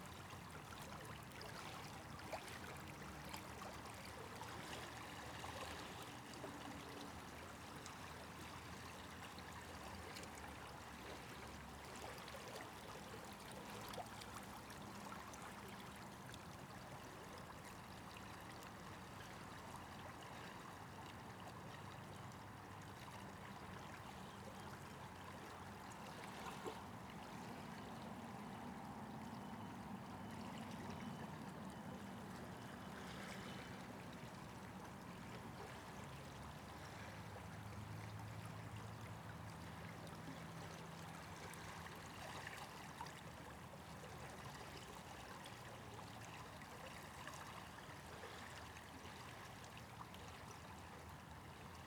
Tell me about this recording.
There was a dry spell of weather this morning, so I decided to make the most of it and go out recording. The location was a estuary, and the tide was on the way in (high tide around 3pm). After walking around and making a few recordings, I came across a inlet into what is called "Carnsew Pool", as the tide was coming in the water was rushing past me, swirling and bubbling (kind of), the current looked extremely strong. The location has changed since google did the satellite shots, above my location is a inlet that leads to the other body of water. The weather was cloudy, dry with a slight breeze. Slight post-processing - Used EQ to remove traffic hum. Microphones - 2 x DPA4060, Recorder - Tascam DR100